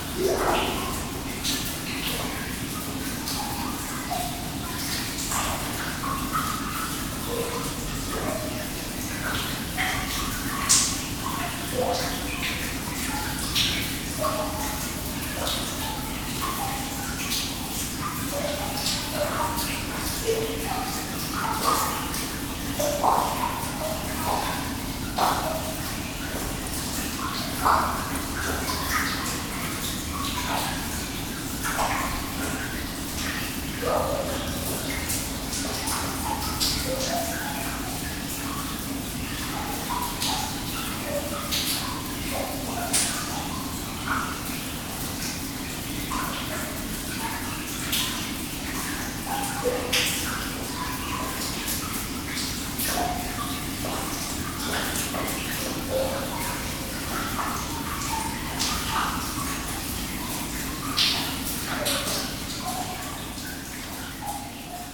Aumetz, France - The pit François
This is a 180 meters deep pit. Here is the sound of the water above the pit. The wind is very powerfull and it's audible.